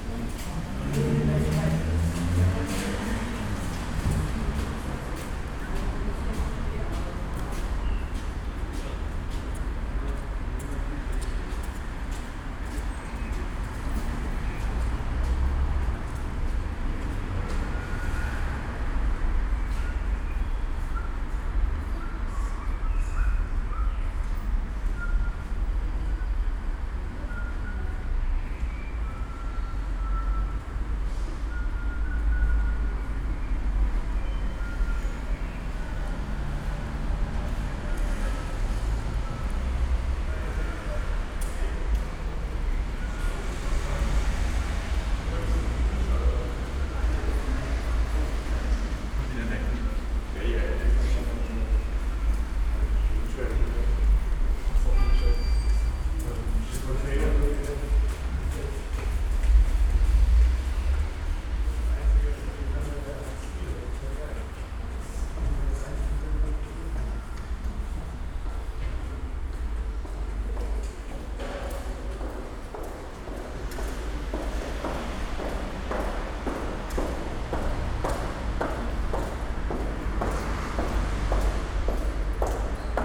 S-Bahnhof Sonnenallee, Neukölln, Berlin - station ambience
ambience at S-Bahn station Sonnenallee, Sunday afternoon
(Sony PCM D50, DPA4060)
Berlin, Deutschland, European Union, 26 May 2013